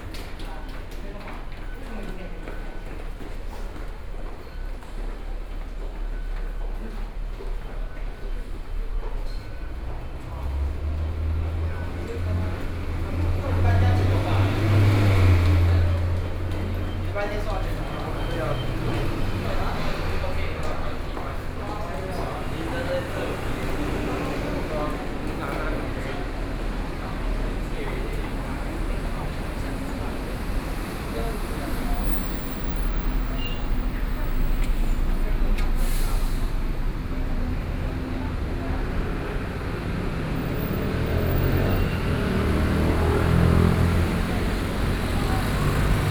Shandao Temple Station, Taipei - walking out of the Station
From the start out of the station platform, Then on the road to the ground floor, Binaural recordings, Sony PCM D50 + Soundman OKM II